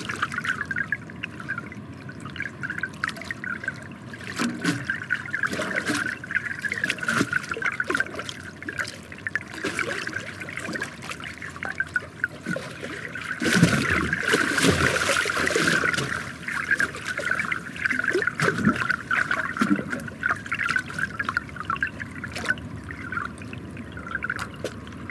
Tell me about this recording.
3-channel live mix with a pair of DPA 4060s and a JrF hydrophone. Recorded with a Sound Devices MixPre-3.